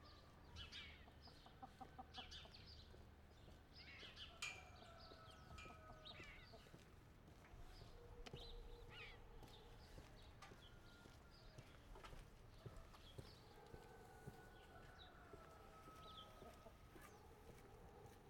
Arica, Corral, Valdivia, Los Ríos, Chili - AMB CORRAL MORNING STREET CALM BIRDS MS MKH MATRICED
This is a recording of Corral, by morning in a quiet street. I used Sennheiser MS microphones (MKH8050 MKH30) and a Sound Devices 633.